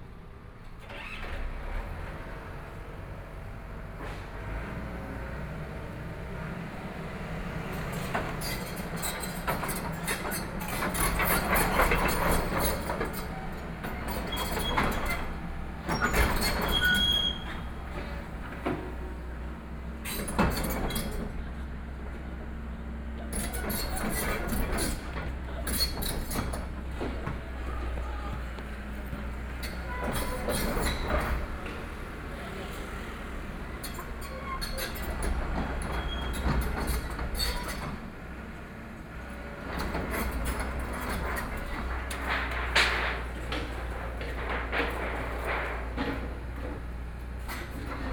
Zhongshan District, Taipei City - Construction site
Construction Sound, Traffic Sound, Binaural recordings, Zoom H4n+ Soundman OKM II